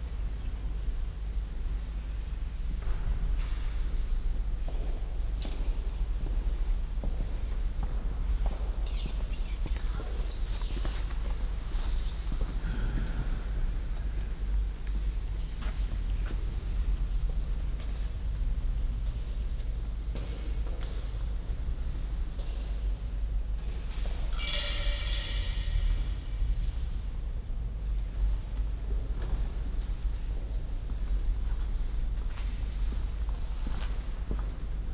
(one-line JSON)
{"title": "St.-Nikolai-Kirche, Alter Markt, Kiel, Deutschland - Quiet inside St. Nikolai church, Kiel, Germany", "date": "2017-10-02 15:07:00", "description": "Binaural recording, Zoom F4 recorder with OKM II Klassik microphone and A3-XLR adapter.", "latitude": "54.32", "longitude": "10.14", "altitude": "8", "timezone": "Europe/Berlin"}